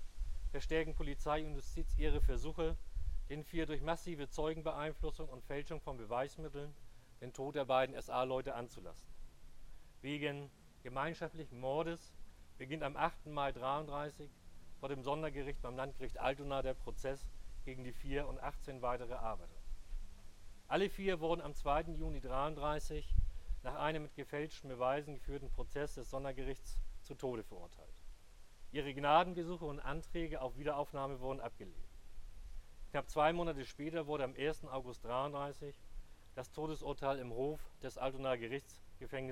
Altonaer Blutsonntag - Gedenktag Justizopfer Altonaer Blutsonntag, 01.08.2009. Teil 3
Rede von Olaf Harms, Mitglied der Fraktion Die Linke, Bezirksversammlung Hamburg-Mitte, Vorsitzender der DKP